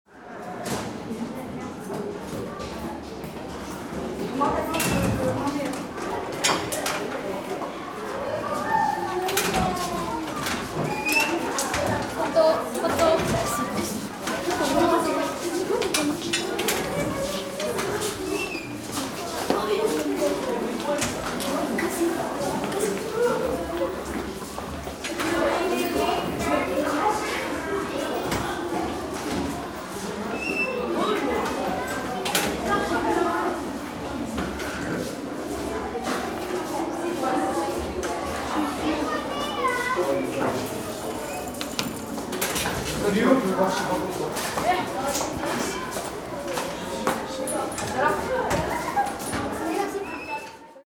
Hall de la Vie scolaire, collège de Saint-Estève, Pyrénées-Orientales, France - Hall de la Vie scolaire
Dans le hall de la Vie scolaire.
Passage d'élèves après la sonnerie de l'intercours.
Preneur de son : Abraham
17 February 2011